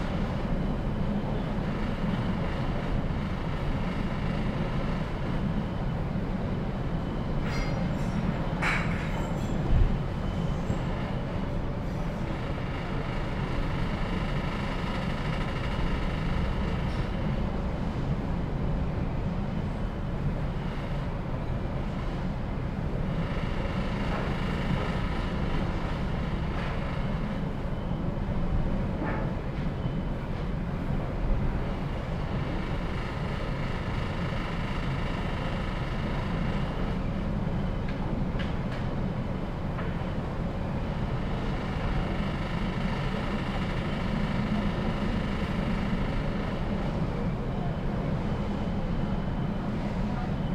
little storm overboard, 7 deck
Kelnase, Harju maakond, Estonia, October 2017